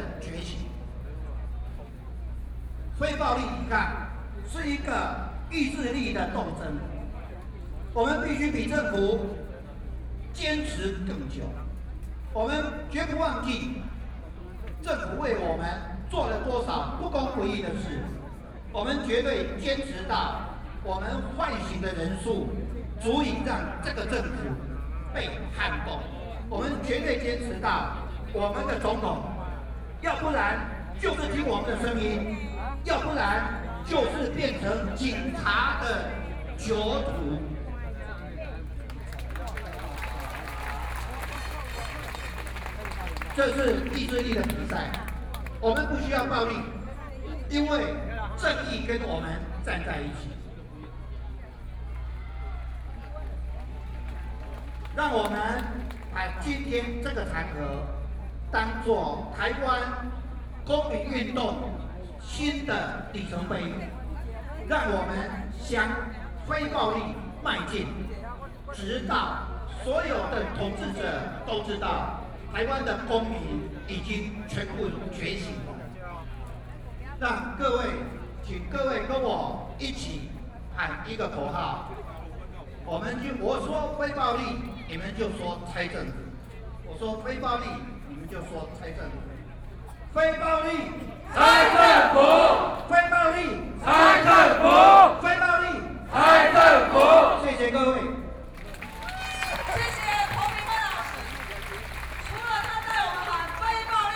Ketagalan Boulevard, Zhongzheng District - Protest Speech
Protest Speech, The assassination of the former president who is professor of speech, Sony PCM D50 + Soundman OKM II
Taipei City, Taiwan